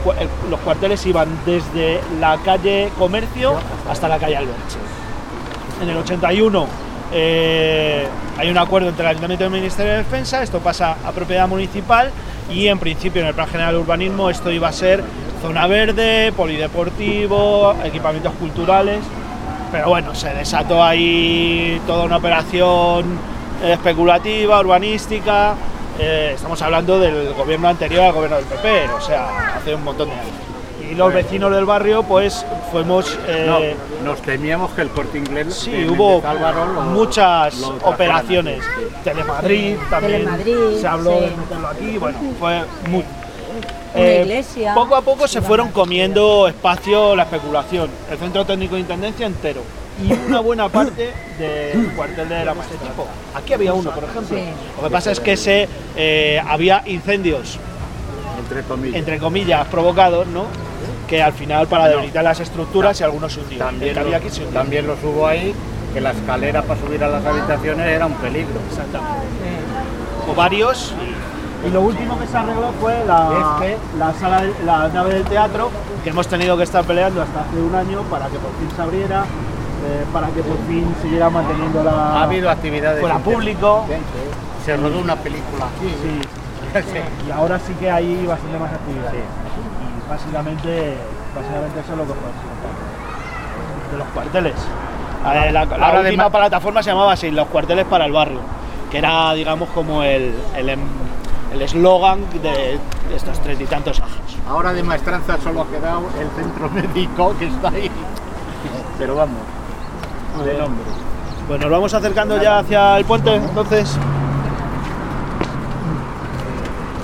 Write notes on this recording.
Pacífico Puente Abierto - CC Daoiz y Velarde (antiguos Cuarteles de Artillería)